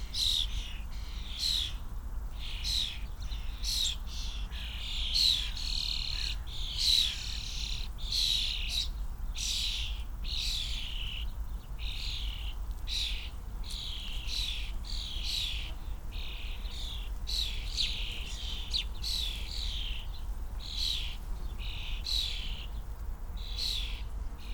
Tempelhofer Feld, Berlin - young starlings (Sturnus vulgaris)
a bunch of young starlings (Sturnus vulgaris) tweeting and chatting in a bush, occasionaly fed by their parents
(Sony PCM D50, Primo EM172)
29 June 2019, ~15:00